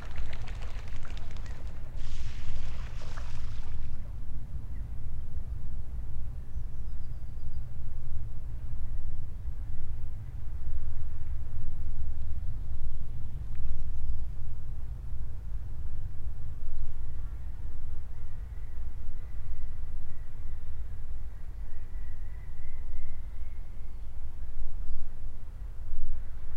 Strömbäck Kont nature reserve. Lake scene.
Nesting gulls. Birds washing. Sea and a plane is keynote in background. Talking in background. Group kaving Fika. Rode NT4